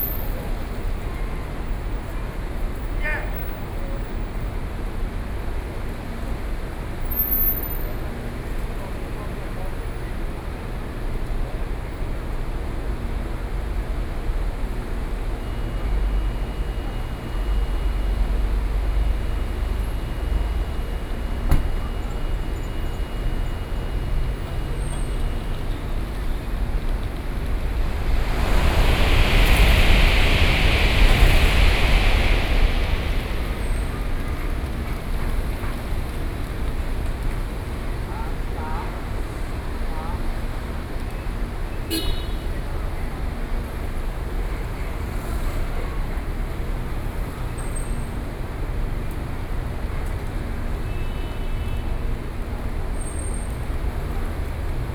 {"title": "Chiayi Station, THSR, Chiayi County - Outside the station", "date": "2013-07-26 19:56:00", "description": "Outside the station, Sony PCM D50 + Soundman OKM II", "latitude": "23.46", "longitude": "120.32", "altitude": "12", "timezone": "Asia/Taipei"}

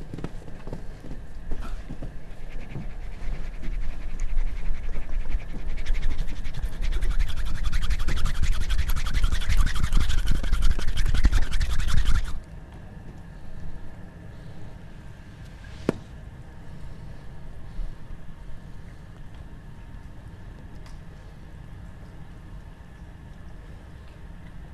{"title": "Snow, Icicles and 4 energies, Školská", "date": "2010-12-10 13:00:00", "description": "his file was recorded during one of very cold December early evening in the courtyard of Skolska 28 Gallery. In my headphones I was listening one recording, a walk along the rural farm (former cow house) in Vysocina region. Within the frame of the project 4 energies (see the link below for more information), it was recorded several interpretations by various musicians and with different instruments. In this case I used the snow as an instrument. In the background you can hear ambient of Prague city and breaking big icicles.", "latitude": "50.08", "longitude": "14.42", "timezone": "Europe/Prague"}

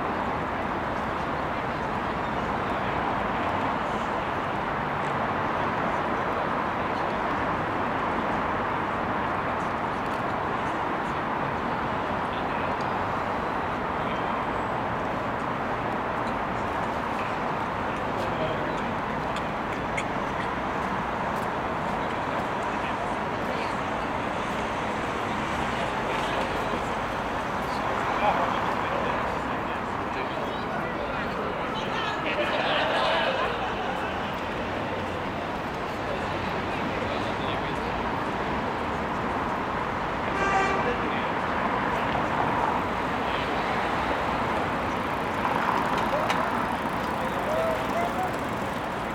{"title": "Queens Square, Belfast, UK - Albert Memorial Clock", "date": "2022-03-27 18:13:00", "description": "Recording of distant bar chatter, vehicles passing, pedestrians walking, dogs walking, children talking, distant laughter, vehicle horn, bicycle passing, birds flying and squawking, random object noise.", "latitude": "54.60", "longitude": "-5.92", "altitude": "6", "timezone": "Europe/London"}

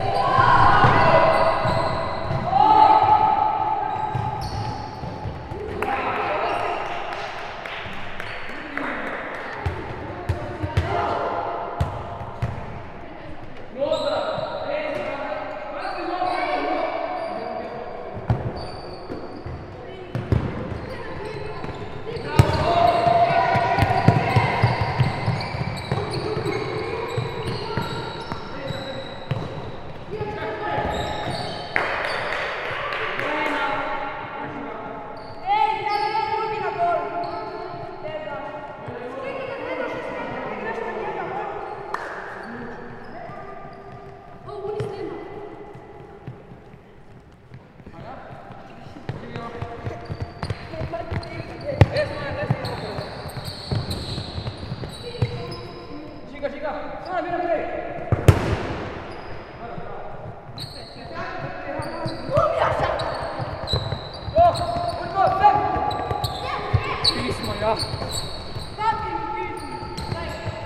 {"title": "Športna dvorana Balon, Nova Gorica, Slovenija - Igranje nogometa v telovadnici", "date": "2017-06-07 14:41:00", "description": "Children playing football in the gym. Lots of shoe squeaking...\nRecorded with H5n + AKG C568 B", "latitude": "45.96", "longitude": "13.64", "altitude": "90", "timezone": "Europe/Ljubljana"}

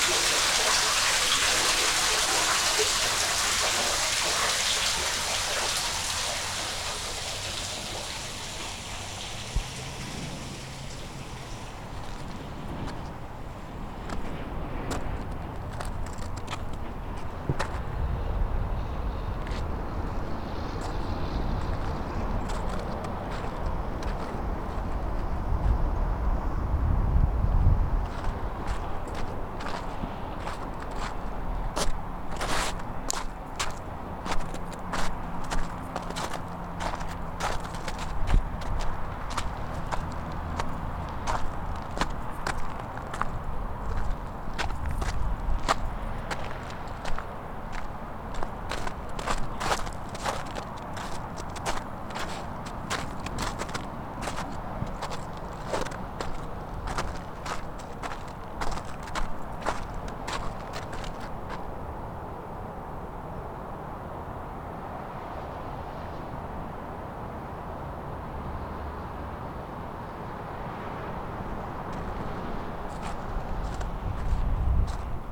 {"title": "Montreal: Turcot Yards (forgotten manhole) - Turcot Yards (forgotten manhole)", "date": "2009-03-17 14:00:00", "description": "equipment used: Korg Mr 1000\nI found an entrance way into the sewer system just behind 780 saint-rémi and just love to sound of water.", "latitude": "45.47", "longitude": "-73.60", "altitude": "31", "timezone": "America/Montreal"}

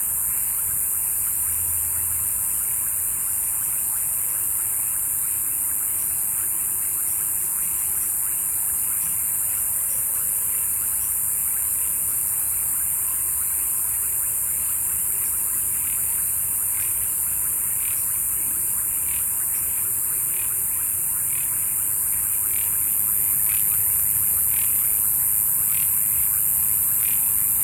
{"title": "Mompós-Guataca, Mompós, Bolívar, Colombia - Ranas en la vía a Guataca", "date": "2022-05-01 18:03:00", "description": "Al atardecer, en este sector inundaba del río Magdalena se escuchan los insectos, aves y ranas que habitan la zona.", "latitude": "9.21", "longitude": "-74.38", "altitude": "21", "timezone": "America/Bogota"}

